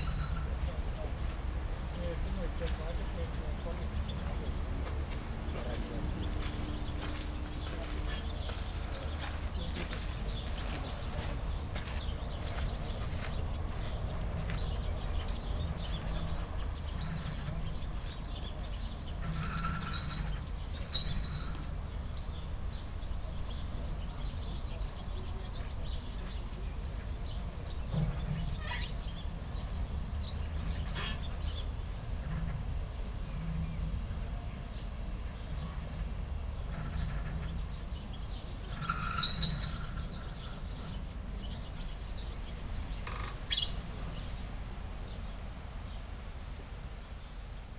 Köpenick, Freiheit15, sitting outside having a coffee, listening to a squeaking old ship moved by wind and waves.
(Sony PCM D50, DPA4060)
Berlin, Germany, 16 May 2016, 14:30